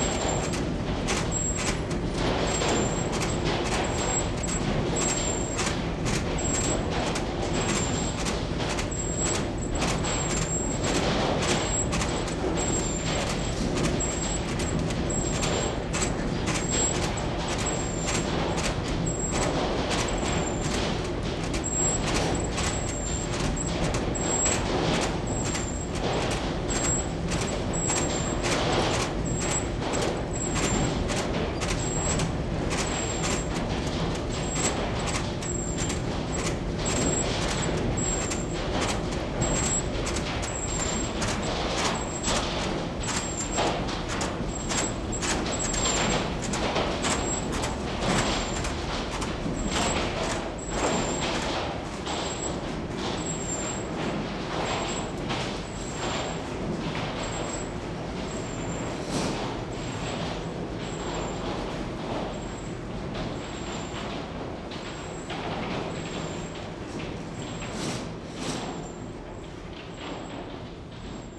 Punta Arenas, Región de Magallanes y de la Antártica Chilena, Chile - storm log - seaweed drying process 02
Seaweed drying process, wind = thunderstorm
"The Natural History Museum of Río Seco is located 13.5 km north (av. Juan Williams) of the city of Punta Arenas, in the rural sector of Río Seco, within the facilities of Algina SA; a seaweed drying Company, which have kindly authorized the use of several of their spaces for cultural purposes, as long as they do not interfere with the output of the Company. These facilities were built largely between 1903 and 1905, by the The South America Export Syndicate Lta. firm."